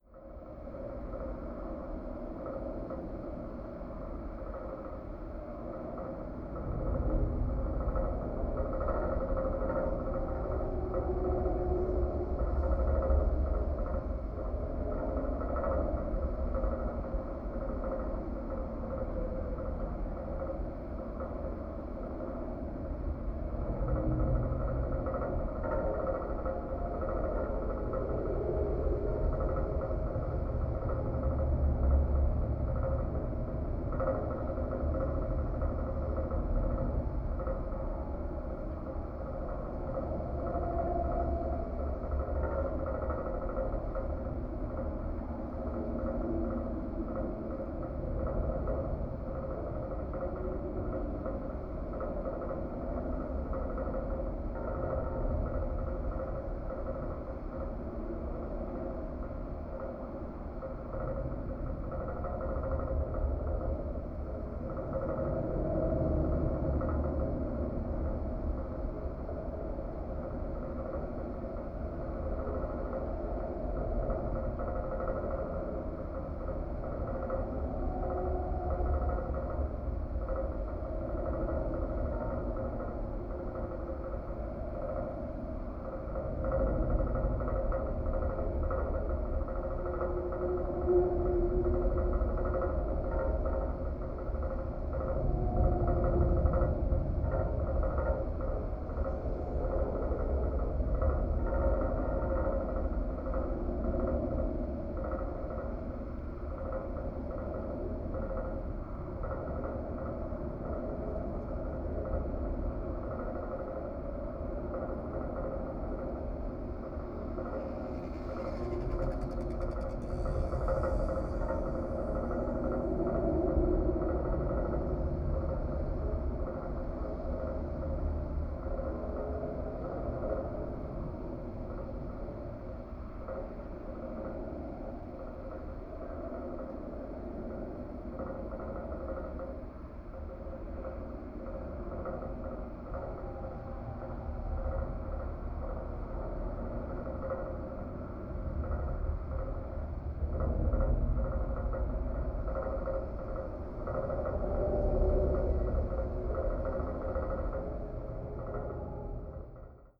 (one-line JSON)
{"title": "Autobahn bridge, Köln, Deutschland - railing vibrations", "date": "2017-08-16 16:10:00", "description": "mics attached to a different structure\n(Sony PCM D50, DIY contact mics)", "latitude": "50.90", "longitude": "6.99", "altitude": "53", "timezone": "Europe/Berlin"}